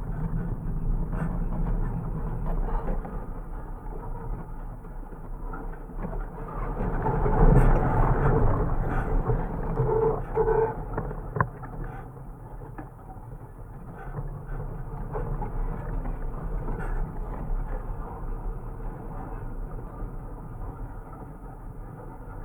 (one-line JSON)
{"title": "Bonaforth, Grabeland, Deutschland - BonaforthFence160718", "date": "2016-07-18 18:20:00", "description": "2 piezo discs attached on wires of a fence. Blades of grass moved by the wind touching the wires, vibrations and something which sounds like the call of an animal. Recorded on a SoundDevices 702 with the use of HOSA MIT-129 transformers. #WLD2016", "latitude": "51.40", "longitude": "9.63", "altitude": "122", "timezone": "Europe/Berlin"}